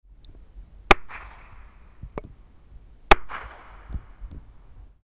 Lagunas de montebello - Deep blue water
mighty echoes inside one of the lagunas de bontebello, mexico.